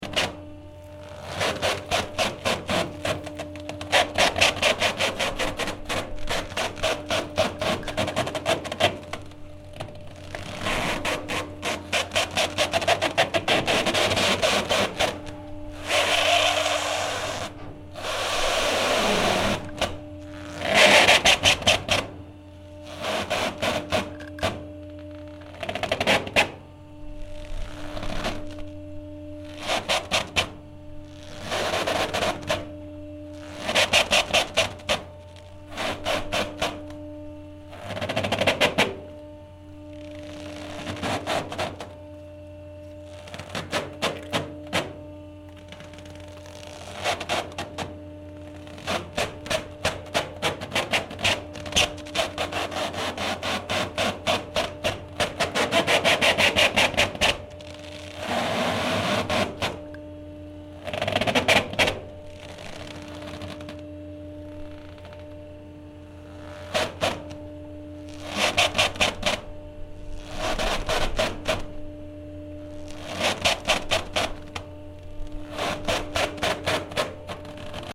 Hamnen/the harbour, Visby, Sverige - Boat in harbour
Late night in the harbour of Visby. A big ferry is parked in the harbour. While the boat is waving up and down, it makes this noise gliding against the (car-)wheels that keeps the bottom of the boat protected from damage. The drone/long note in the background also comes from the boat.
Recorded with Zom h4n.